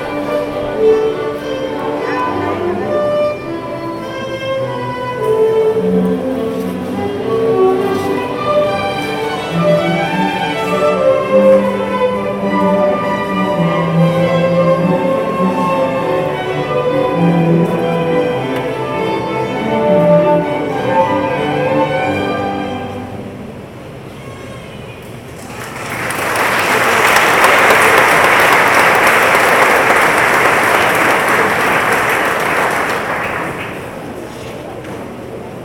Iglesia de Santa Bárbara, Mompós, Bolívar, Colombia - Concierto en la iglesia De Santa Bárbara
Concierto de Semana Santa en la iglesia de Santa Barbara. El repertorio estuvo a cargo de la Camerata Heróica, un ensamble de jóvenes de Cartagena, Colombia.
April 2022